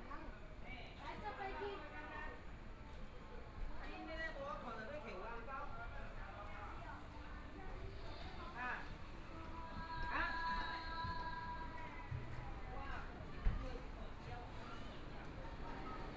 聚葉里, Zhongshan District - In the Street
Walking through the small streets, Binaural recordings, Zoom H4n+ Soundman OKM II